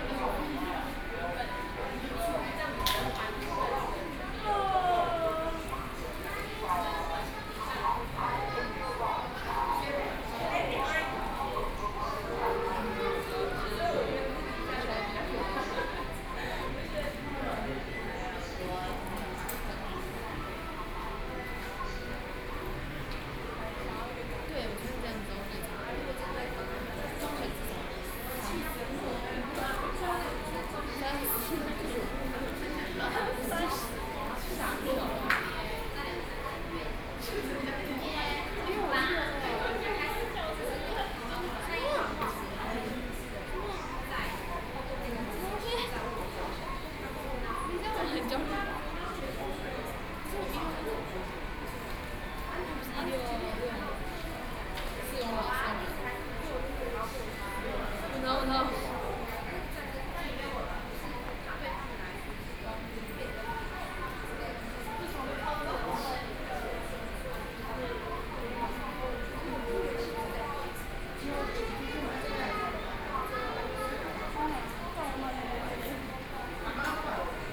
Yancheng, Kaohsiung - MRT station platforms
Waiting for the MRT, Sony PCM D50 + Soundman OKM II